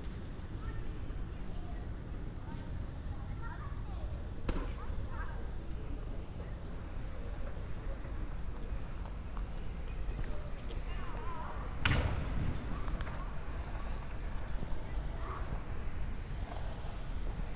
berlin, gropiushaus, walk - inner circle
a walk in the inner circle from right to left